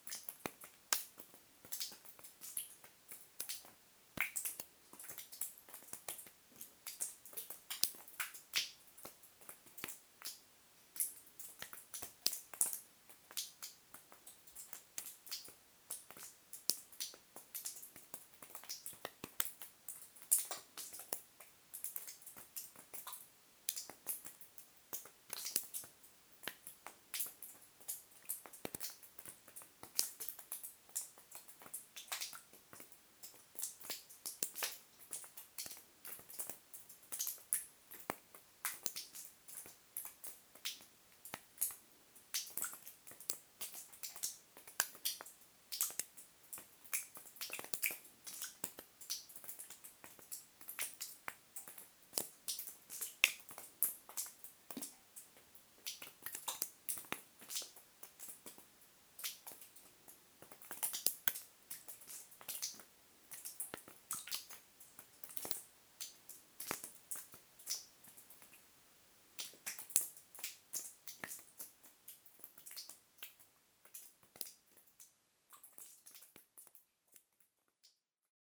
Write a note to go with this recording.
Water falling in a cement mine tunnel. Because of a collapsed part, it's now a dead end tunnel.